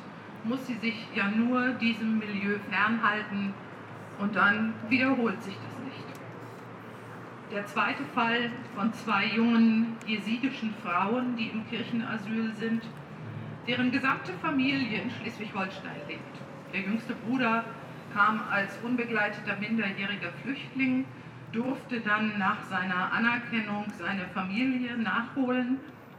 Hamburg, Deutschland - Demonstration
Hauptkirche St. Petri & Speersort. A small demonstration in the street. One hundred people want to welcome refugees in Germany. Catholic speech and religious song.
Hamburg, Germany, 19 April 2019, 12:45